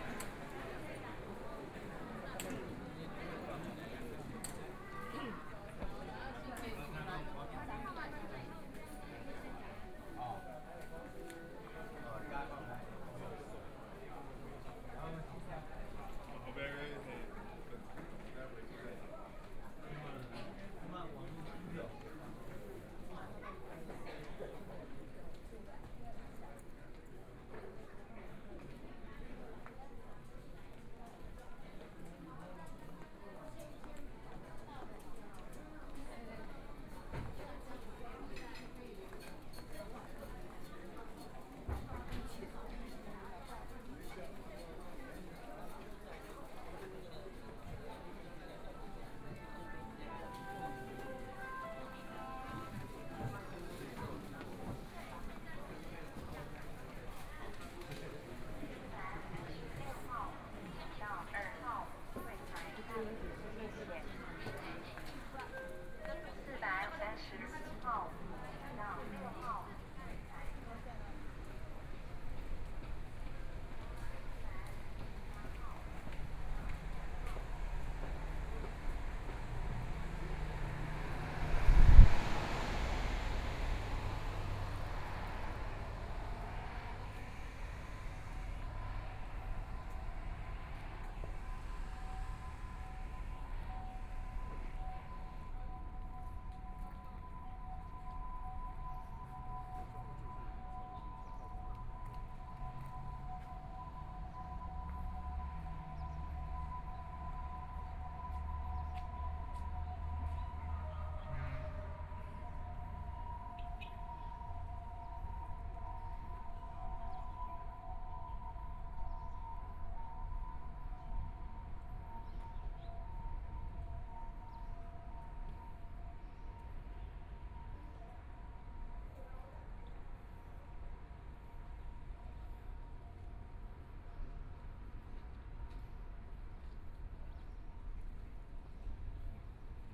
號慈濟醫院花蓮院區, Hualien City - Walking in hospital
Walking in hospital, Then walking out of the hospital, Traffic Sound
Please turn up the volume
Binaural recordings, Zoom H4n+ Soundman OKM II